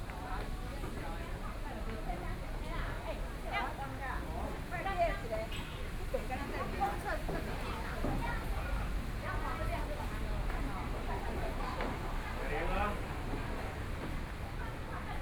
湯圍溝溫泉公園, Jiaosi Township - Hot Springs Park
walking in the Hot Springs Park
Zoom H6 XY mic+ Rode NT4
Yilan County, Taiwan, 17 July 2014